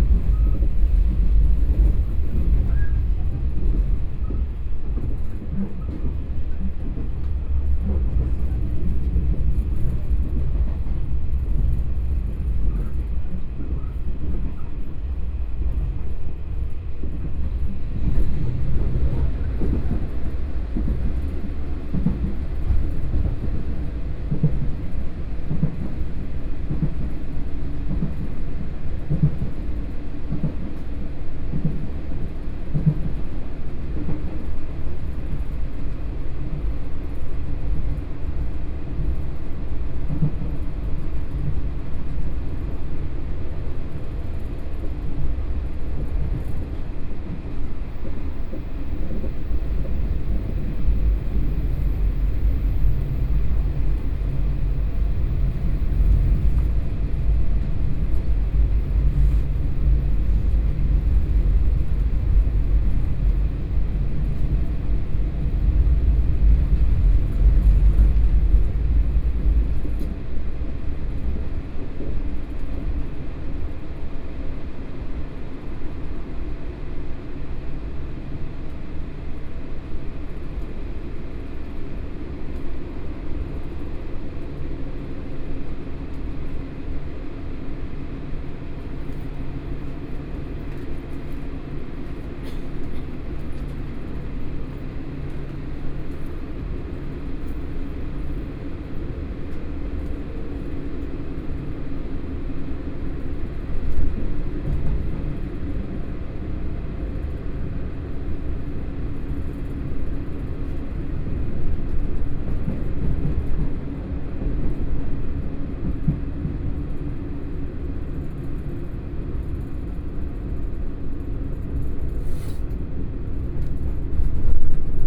from Shulin Station to Banqiao Station, Zoom H4n+ Soundman OKM II
New Taipei City, Taiwan, 11 September 2013